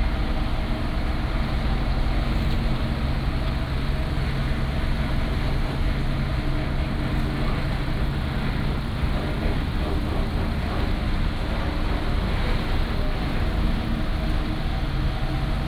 Ice Factory
Binaural recordings
Sony PCM D100+ Soundman OKM II
Magong City, Penghu County, Taiwan, 22 October